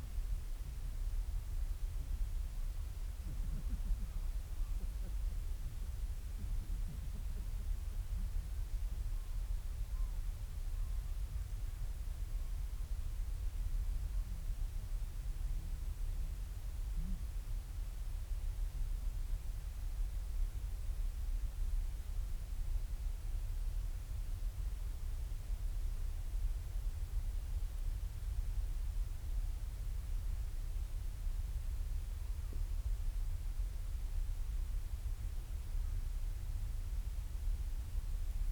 Marloes and St. Brides, UK - european storm petrel ...
Skokholm Island Bird Observatory ... storm petrel quiet calls and purrings ... lots of space between the calls ... lavalier mics clipped to sandwich on top of bag ... calm sunny evening ...
16 May, 23:00